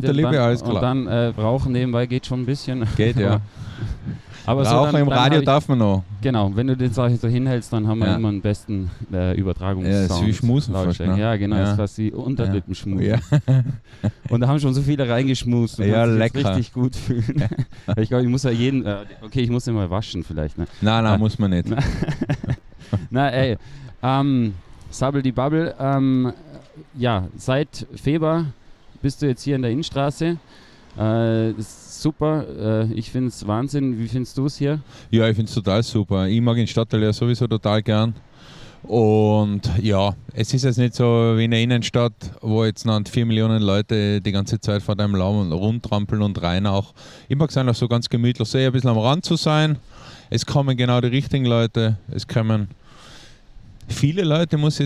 Innstraße, Innsbruck, Österreich - fm vogel NABU SPEZIAL
vogelweide, waltherpark, st. Nikolaus, mariahilf, innsbruck, stadtpotentiale 2017, bird lab, mapping waltherpark realities, kulturverein vogelweide, nabu records, robi, fm vogel, radio freirad